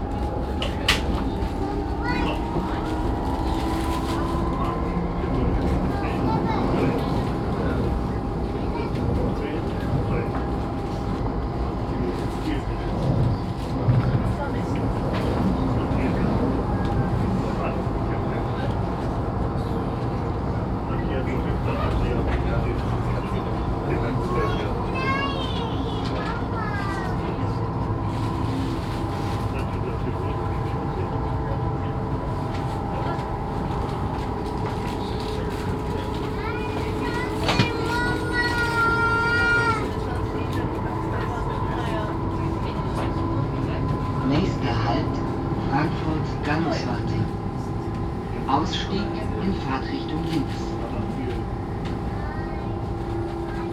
Gallus, Frankfurt, Deutschland - frankfurt, inside s-bahn train
Inside a S-Bahn - train arriving at station Galluswarte. The sound of the moving train and the atmosphere inside. A child talking and train announcemens.
soundmap d - social ambiences and topographic field recordings
Frankfurt, Germany